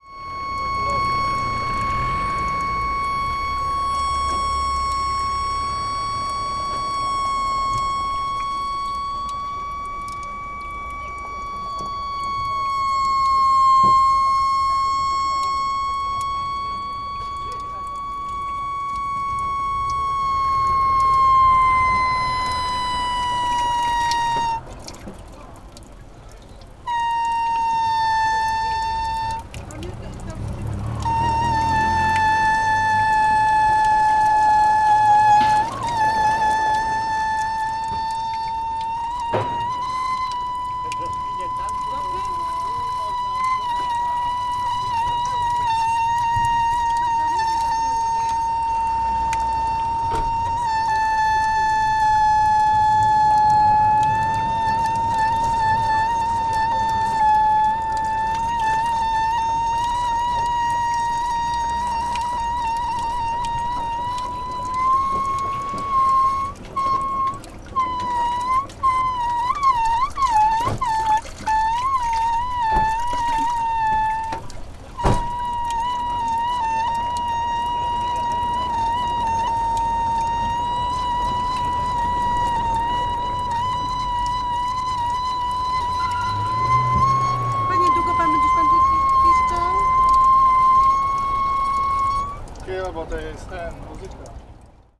{"title": "Poznan, Wilda district, Wilda market - faucet instrument", "date": "2015-09-11 13:53:00", "description": "a pipe with a faucet. i could change the pitch by turning the faucet and make some high pitched sounds. after a minute or os someone got impatient and asked how long will i make these sounds. (sony d50)", "latitude": "52.39", "longitude": "16.92", "altitude": "74", "timezone": "Europe/Warsaw"}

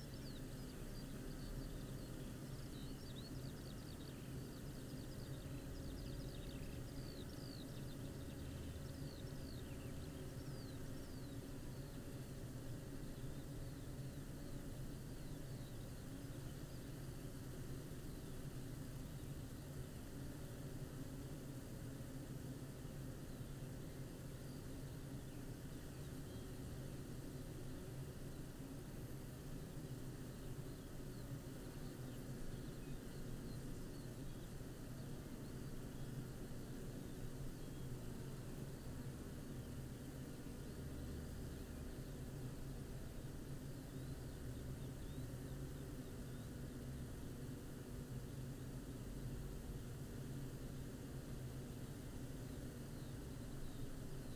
{"title": "Green Ln, Malton, UK - bee hives ...", "date": "2020-06-26 05:50:00", "description": "bee hives ... dpa 4060s clipped to bag to Zoom H5 ... all details above ...", "latitude": "54.13", "longitude": "-0.56", "altitude": "105", "timezone": "Europe/London"}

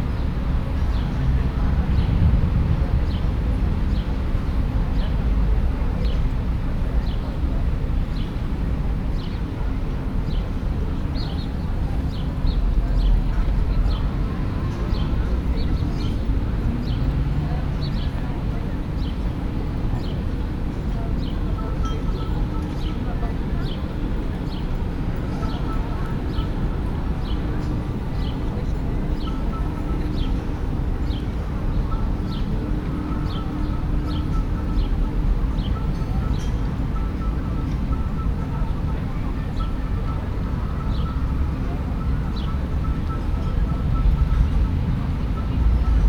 {"title": "Kassel Friedrichsplatz", "date": "2010-08-02 15:20:00", "description": "sitting on the stairs of the Friederichsplatz in the sun. ZoomH4 + OKM binaural mics", "latitude": "51.31", "longitude": "9.50", "altitude": "170", "timezone": "Europe/Berlin"}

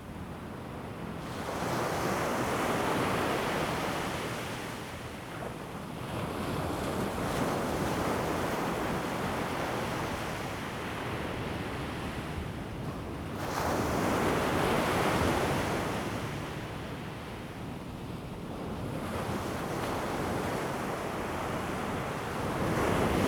{"title": "台東海濱公園, Taitung City - the waves", "date": "2014-09-04 20:14:00", "description": "Waterfront Park, Beach at night, The sound of aircraft flying\nZoom H2n MS + XY", "latitude": "22.75", "longitude": "121.16", "timezone": "Asia/Taipei"}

